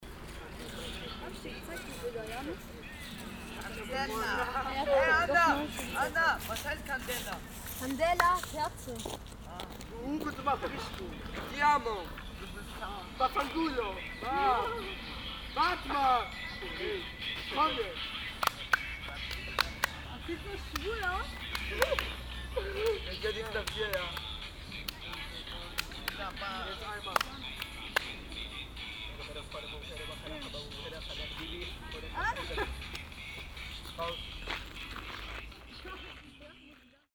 cologne, stadtgarten, jugendliche an bank
stereofeldaufnahmen im september 07 nachmittags
project: klang raum garten/ sound in public spaces - in & outdoor nearfield recordings
stadtgarten, park an parkbank, 2 May 2008